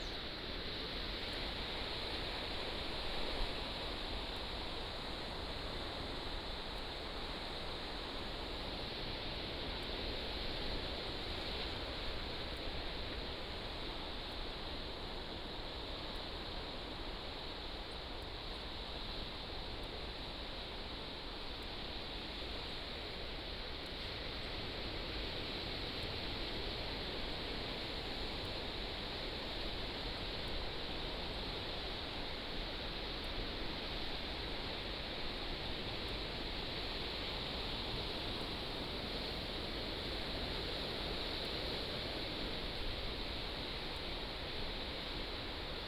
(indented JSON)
{
  "title": "橋仔村, Beigan Township - sound of the waves",
  "date": "2014-10-13 16:38:00",
  "description": "Sound of the waves",
  "latitude": "26.23",
  "longitude": "119.99",
  "altitude": "182",
  "timezone": "Asia/Taipei"
}